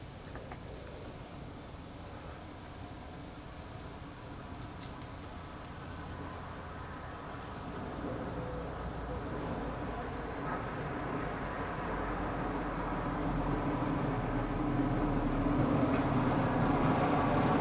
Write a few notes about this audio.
Cars mostly. boire un café à la terrasse de l'épicerie. Des voitures surtout. Tech Note : Sony PCM-M10 internal microphones.